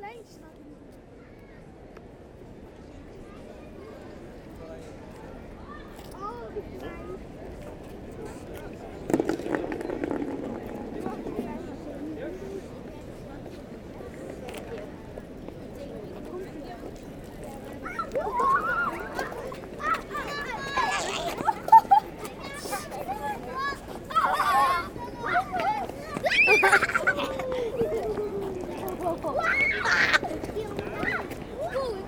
Maastricht, Pays-Bas - Children playing with bubbles
A street artist produces a colossal amount of bubbles. A swarm of children is trying to catch it. Some have full of dishwasher soap on their hair !